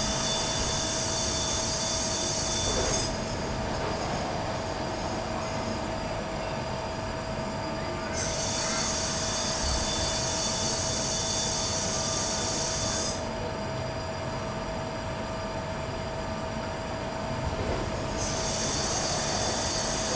Mews Rd, Fremantle WA, Australia - Little Creatures Brewery - External Tank Sounds
Strange noises from what sounds like gas pumping into what appears to be beer brewing tanks.
16 November 2017